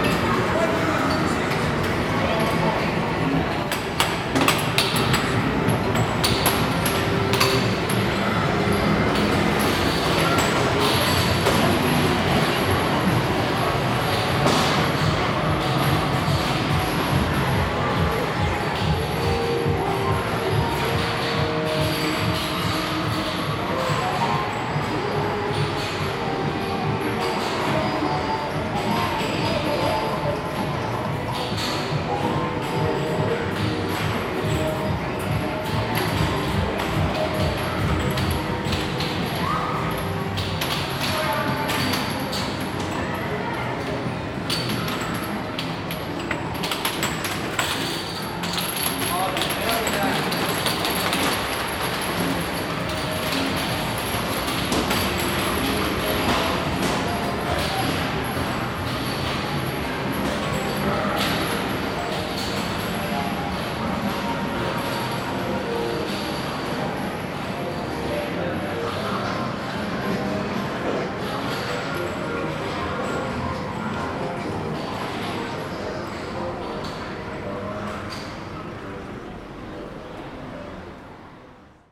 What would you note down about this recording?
Timezone is a local video game arcade. I walk in from the street with my Zoom h2n, Xy/MS (surround) mode on, and do a walking lap, then walk back out onto the street again. Apologies for the clipping when I walked past the shuffle board. A huge guy was getting pretty involved in his game and was tonking the pucks with everything he had!